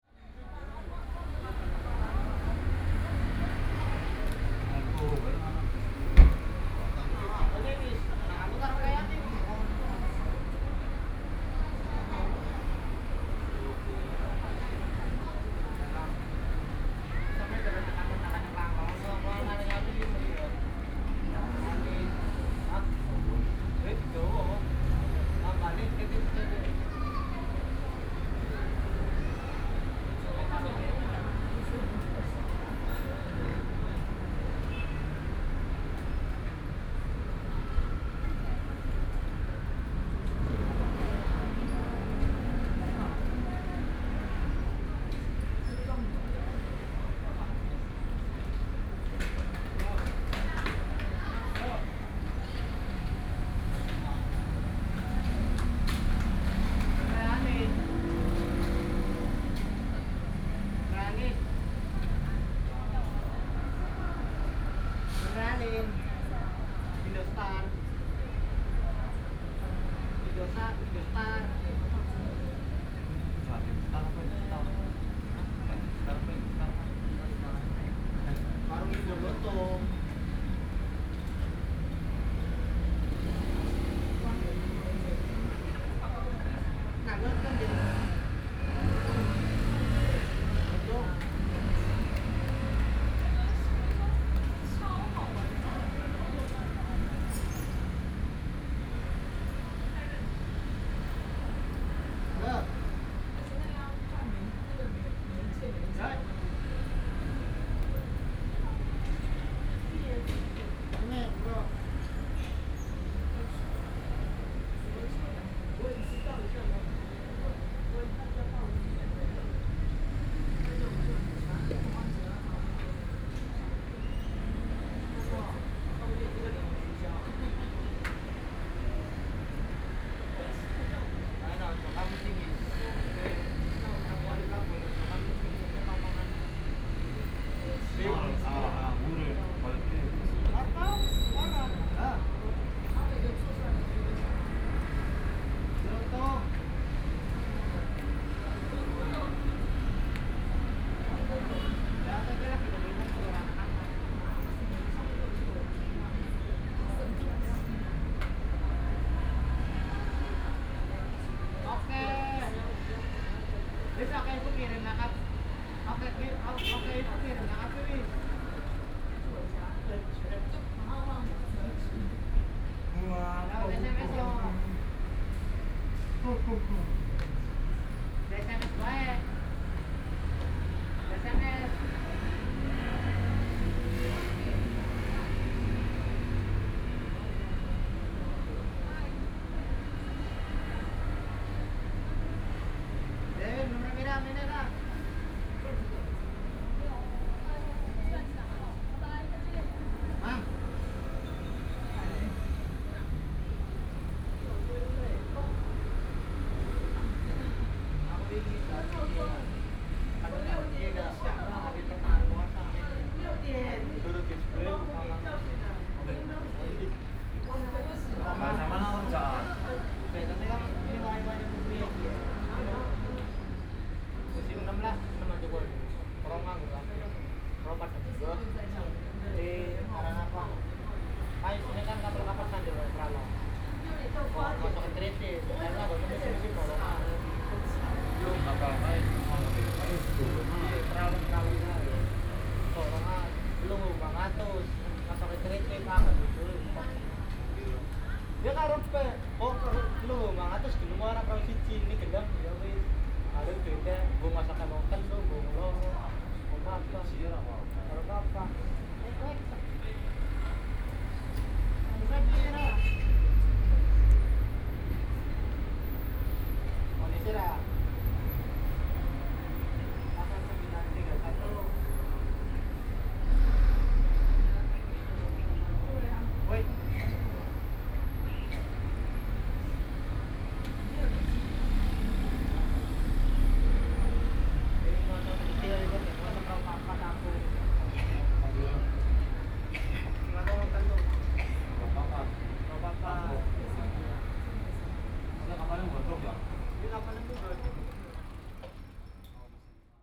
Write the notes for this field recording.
Outside the station, Traffic Sound